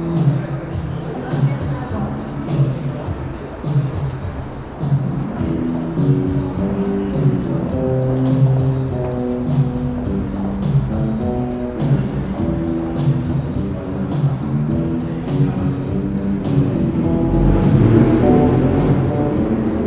Minsk, Prospekt Nezavisimosti - No Wave Busker
Busker in an underpass, playing songs from Soviet films on his bass accompanied by a drum machine.